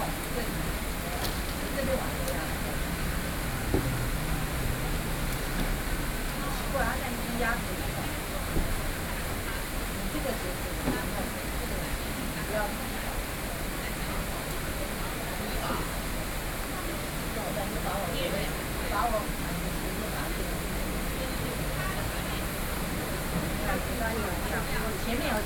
Beitou Park - In the rest of the tourists
26 October 2012, ~16:00